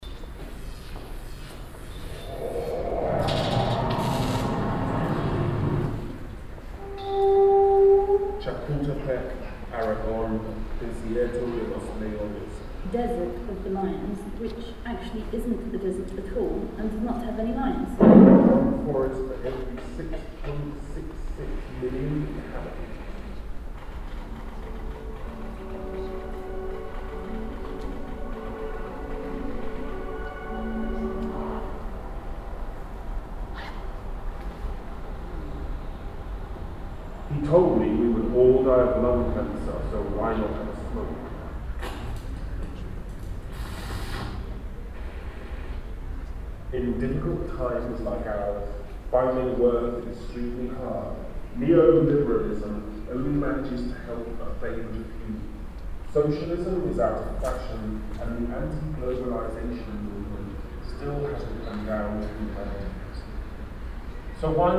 cologne, josef-haubricht hof, videoinstallation zu plan08
temporäre videoinstallation zu plan 08 im offenen hof der volkshochschule (VHS)
soundmap nrw: social ambiences, topographic field recordings